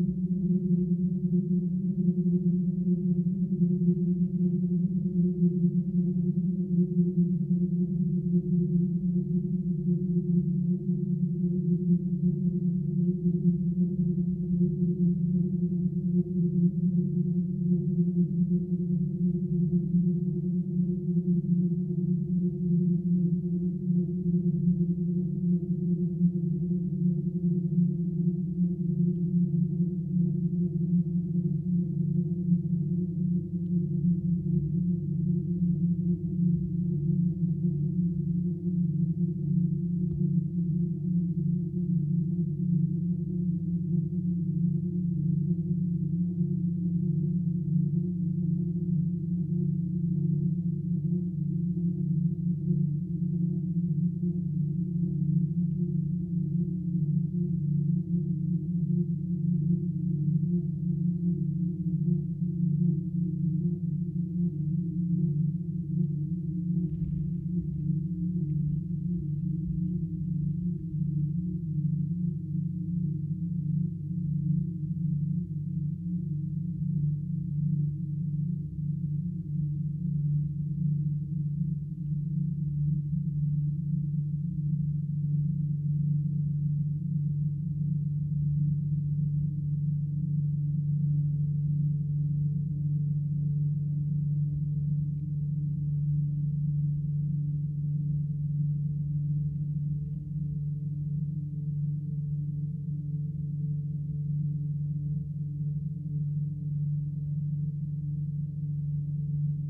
Old Concrete Rd, Penrith, UK - Wind in wires
Wind in electricity wires. recorded with 2x hydrophones